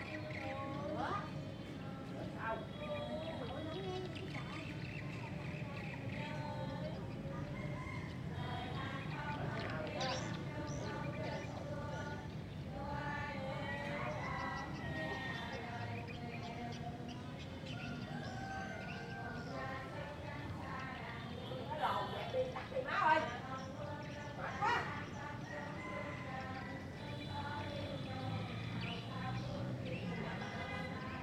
Trương Định, Châu Phú B, Châu Đốc, An Giang, Vietnam - Châu Đốc, Vietnam 01/2020
Châu Đốc soundscapes - chanting, swift farm, street sound, neighbors talking, laughing ** I was told that the old lady next door died, and these chants are part of ritual (catholic) after 100 days of mourning .... this is my personal favorite sound recording up to date, I was waiting for a moment on my recent trip to Vietnam, though it will be more nature like, but this one exceeded my expectations, please enjoy ...